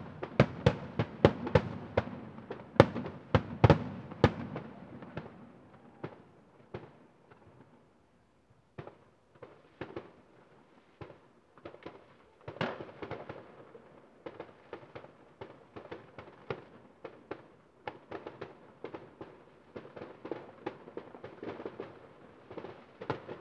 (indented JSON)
{
  "title": "Poznan, balcony - district holiday",
  "date": "2014-06-28 22:43:00",
  "description": "fireworks crowning the annual festival of the Piatkowo district. the blast echo off a dozen or so tall apartment buildings made of concrete slabs making a beautiful reverberation over the entire area. lots of room to spared, lush decays.",
  "latitude": "52.46",
  "longitude": "16.90",
  "timezone": "Europe/Warsaw"
}